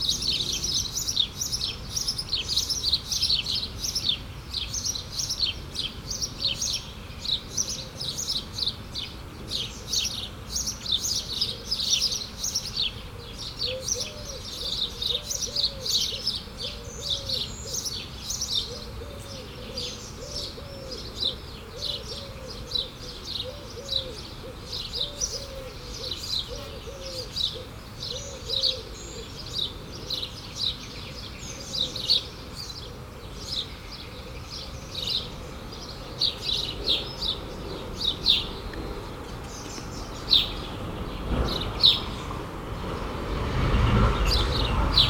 Just near a farm, sparrows shouting. Rural atmosphere, cars, tractors, planes.